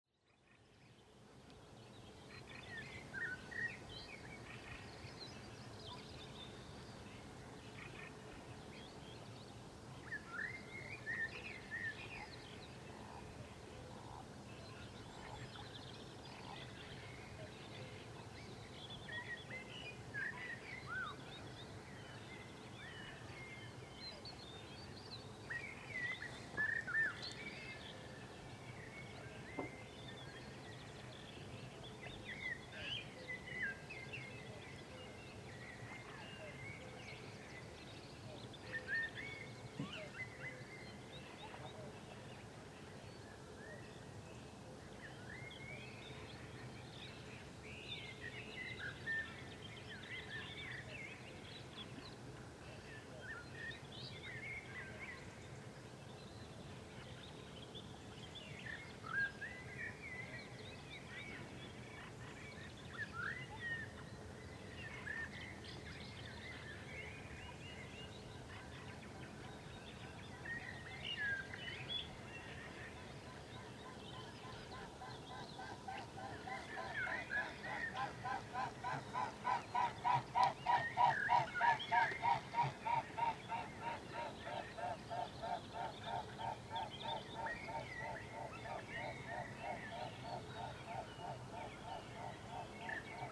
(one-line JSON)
{"title": "Mooste lake, Põlvamaa", "date": "2011-05-08 04:00:00", "description": "Dawn chorus, early May, south Estonia, Sony M10", "latitude": "58.16", "longitude": "27.18", "altitude": "38", "timezone": "Europe/Tallinn"}